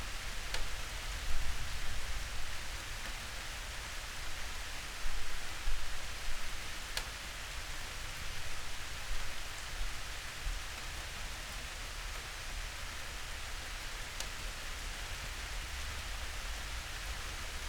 Berlin Bürknerstr., backyard window - rain in backyard
rain drops on leaves and trash bins. a memory soon? The dense vegetation in this yard will dissapear soon, replaced by terrakotta tiles. Trash cans will remain.
(Sony PCM D50, Primo EM172)